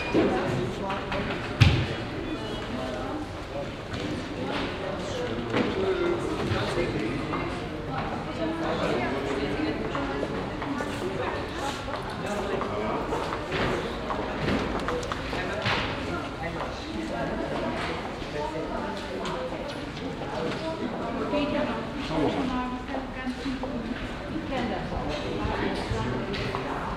{"title": "Stadtkern, Essen, Deutschland - essen, main post office", "date": "2014-04-29 13:30:00", "description": "In der Essener Hauptpost. Der Klang elektronischer Geräte, Schritte und Stimmen in der hallenden Architektur.\nInside the main post office. The sound of electronic devices, steps and voices in the reverbing architecture.\nProjekt - Stadtklang//: Hörorte - topographic field recordings and social ambiences", "latitude": "51.45", "longitude": "7.01", "altitude": "96", "timezone": "Europe/Berlin"}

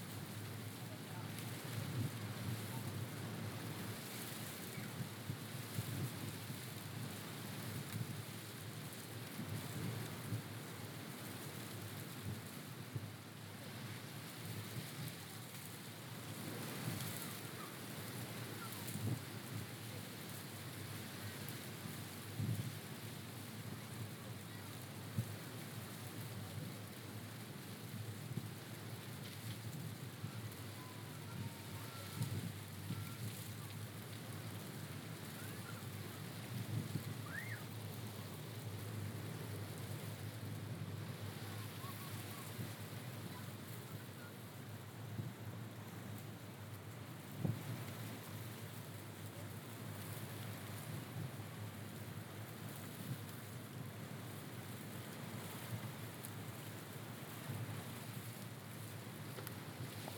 {"title": "Sitra, Bahrain - Palm Leaves in the Wind - Sitra Port, Bahrain", "date": "2016-06-13 14:00:00", "description": "Recording of a Palm tree in the wind at the fisherman's port, Sitra Kingdom of Bahrain.", "latitude": "26.13", "longitude": "50.63", "altitude": "1", "timezone": "Asia/Bahrain"}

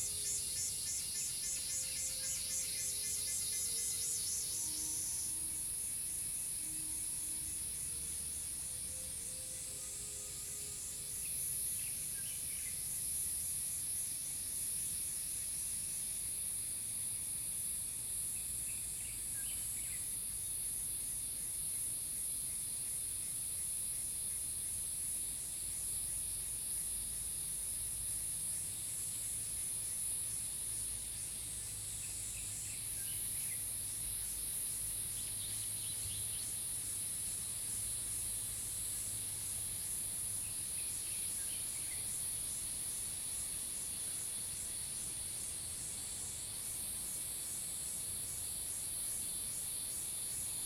{"title": "埔里鎮南村里, Nantou County, Taiwan - In the woods", "date": "2016-06-07 13:02:00", "description": "Cicadas called, In the woods, Birds called\nZoom H2n MS+XY", "latitude": "23.96", "longitude": "120.92", "altitude": "615", "timezone": "Asia/Taipei"}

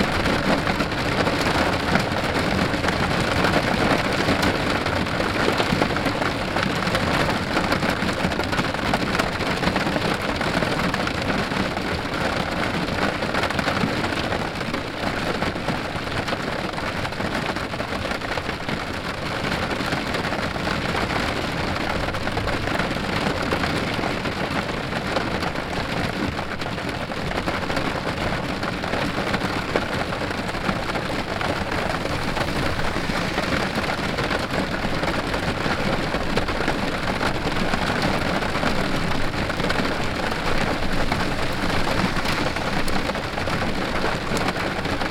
{"title": "St Pierre le Moûtier, N7, Rain and thunderstorm", "date": "2011-05-20 18:51:00", "description": "France, rain, thunderstorm, car, road traffic, binaural", "latitude": "46.79", "longitude": "3.12", "altitude": "214", "timezone": "Europe/Paris"}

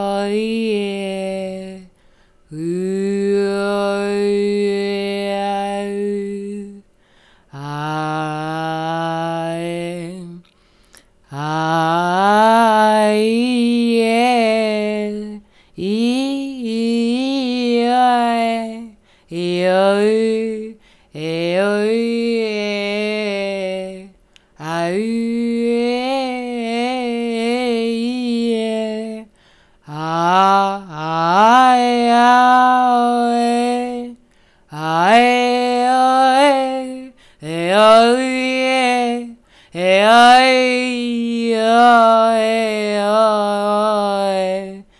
{"title": "rue du lorgeril, Rennes, France - discussion sonore", "date": "2021-04-20 16:10:00", "description": "\"dead drops sonore à distance\"\nQuestionnement et détournement du langage sont les sujets abordés à travers l’installation de ces deux Deads Drops sonores. Entre la ville de Rennes et Barcelone les fichiers sonores contenus dans ces Dead Drops constituent un moyen de communication par l’utilisation d’un langage abstrait, voire d’un nouveau langage, à la manière d’Isidore Isou dans son œuvre « traité de brave et d’éternité » ou encore Guy Debord qui explore le détournement au près des lettristes.\nDans la dead drop de Barcelone se trouve l’élocution des consonnes de la description du projet, alors que dans celle de Rennes l’élocution des voyelles. Cela opère donc une discussion entre les deux villes par un dialogue de mise en abîme à la sonorité absurde faisant appel à la notion de répétition et de non-sens.", "latitude": "48.11", "longitude": "-1.69", "altitude": "29", "timezone": "Europe/Paris"}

